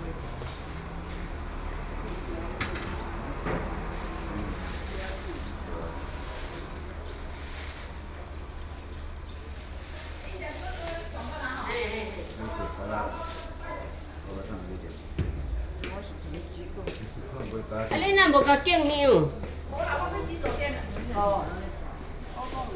Walking through the different floors of the temple
Binaural recordings
Sony PCM D50 + Soundman OKM II
New Taipei City, Taiwan, July 2012